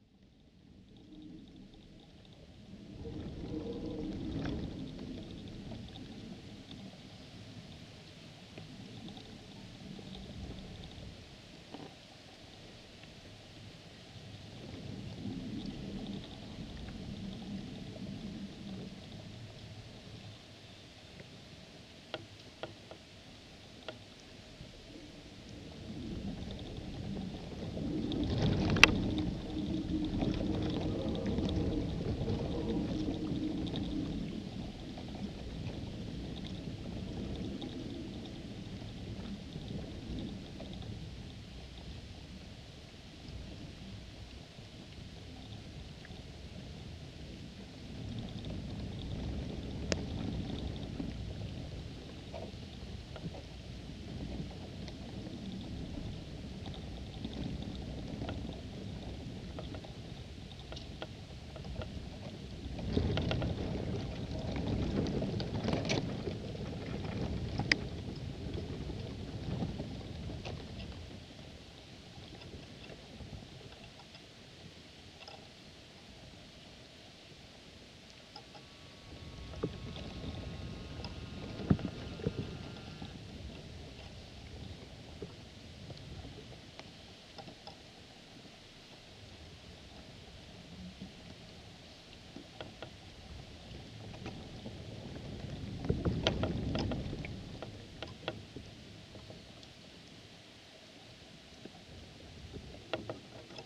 Maribor, Slovenia - one square meter: reed and cable
a dead reed and a heavy, rusted iron cable, both originating on the shore but with ends below the surface of the water. the reed vibrates in the wind like an aeolian harp. recorded with contact microphones. all recordings on this spot were made within a few square meters' radius.